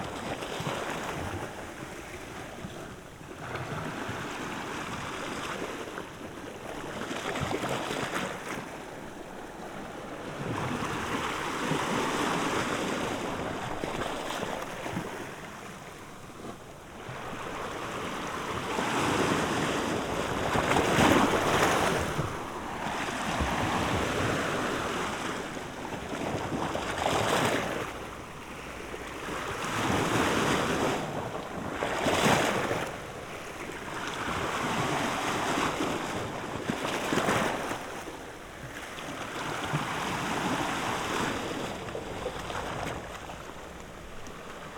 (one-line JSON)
{"title": "St.Peter's Pool, Delimara, Marsaxlokk, Malta - waves", "date": "2017-04-05 13:15:00", "description": "light waves at St.Peter's Pool, Delimara, Malta\n(SD702, AT BP4025)", "latitude": "35.83", "longitude": "14.56", "timezone": "Europe/Malta"}